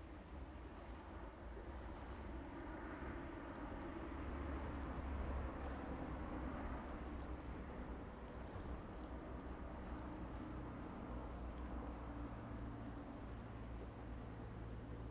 中正橋, Baisha Township - in the Bridge
in the Bridge, Traffic Sound
Zoom H2n MS+XY
Penghu County, Taiwan, 22 October